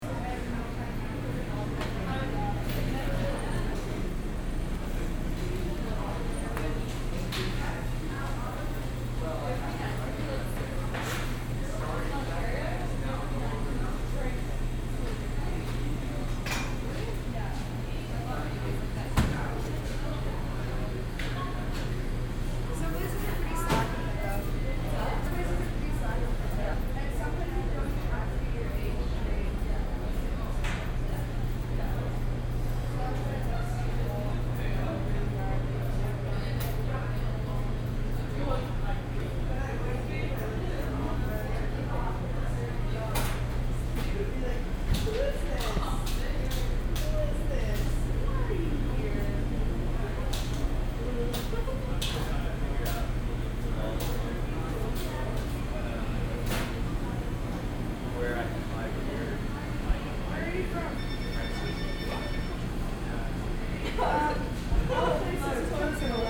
vancouver, granville island, emily carr institute, cafe
students in the evening at the emily carr art institute cafe
soundmap international
social ambiences/ listen to the people - in & outdoor nearfield recordings